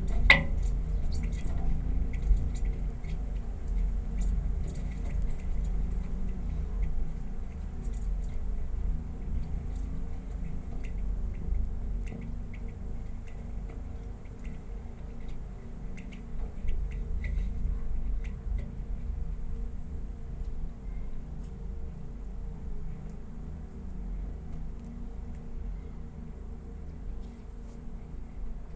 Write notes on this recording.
Bicycling with my son. Stopped for coffe at local stadium. I saw three flagpoles nearby, went to checl for sound:) A pair of little holes in the pole - just right place to put my micro Uši mics...